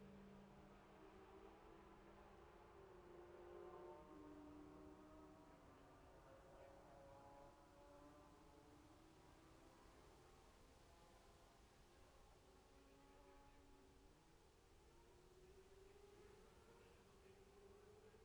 Jacksons Ln, Scarborough, UK - Gold Cup 2020 ...
Gold Cup 2020 ... 2 & 4 strokes ... Memorial Out ... dpa 4060s to Zoom H5 clipped to bag ...
11 September, 11:44